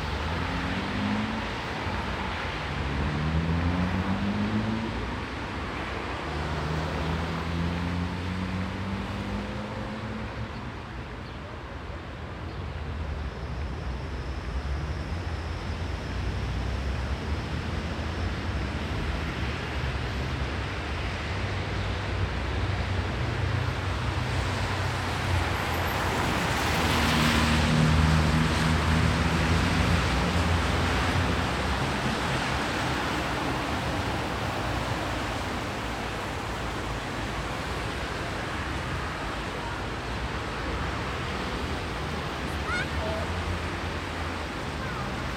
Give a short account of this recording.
Nauener Platz in Berlin was recently remodeled and reconstructed by urban planners and acousticians in order to improve its ambiance – with special regard to its sonic properties. One of the outcomes of this project is a middle-sized noise barrier (gabion wall) reducing the crossroads’ traffic noise on the playground by 3 dB. Starting below the noise barrier, I lifted the microphone over the wall while recording and dropped it below again.